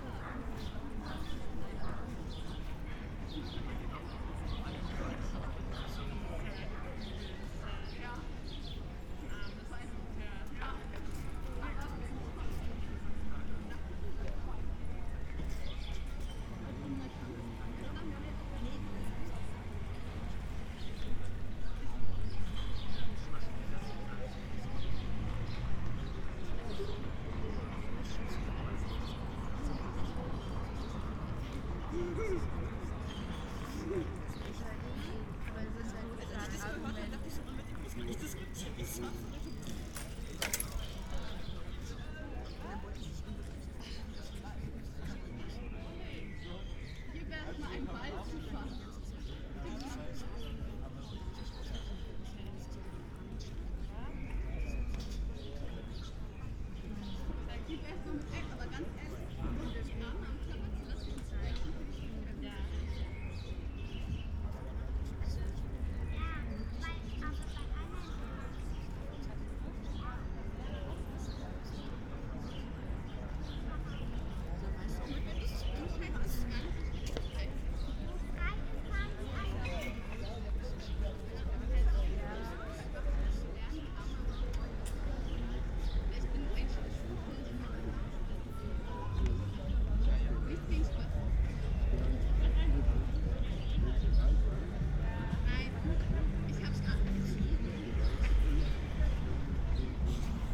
Ohlauer Str., Kreuzberg, Berlin - protests for refugees
ongoing protests and support for refugees in a nearby school. street ambience without cars, instead people are sitting around talking.
(log of the aporee stream, ifon4/tascam ixj2, primo em172)
Berlin, Germany, 29 June